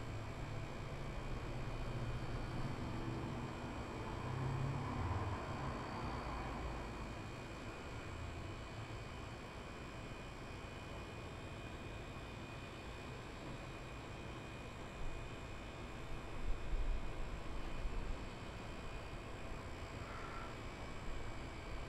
N Tejon St, Colorado Springs, CO, USA - Outdoor Patio of Wooglins Deli
Recorded in the patio area of Wooglin's Deli on a slightly windy day. The mechanical buzz of appliances, a car starting, and light traffic can be heard.